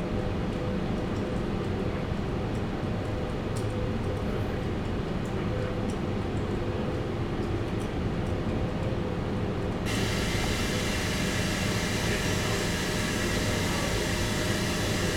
Skladiščna ulica, Sežana, Slovenija - Train stop and departure
Regional Train Trieste IT- Ljubljana SLO, Train Station Sežana At 9: 57.
Recorded with ZOOM H5 and LOM Uši Pro, Olson Wing array. Best with headphones.
Upravna enota Sežana, Slovenija, 1 February 2020